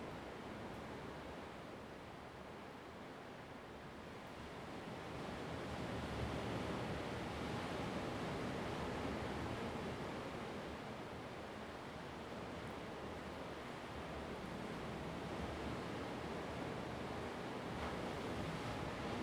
Lüdao Township, Taitung County - Next to a large cliff
Next to a large cliff, sound of the waves, Traffic Sound
Zoom H2n MS +XY
Taitung County, Taiwan, October 2014